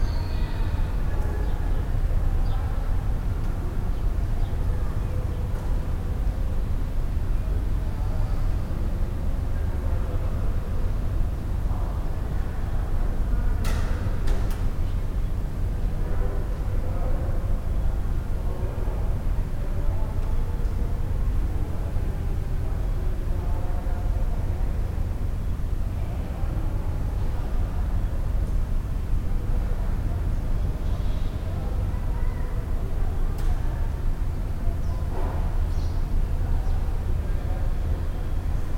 Barreiro, Portugal - Abandoned Train Station
Abandoned Train Station, large reverberant space, people passing, birds, recorded with church-audio binaurals+ zoom H4n
11 September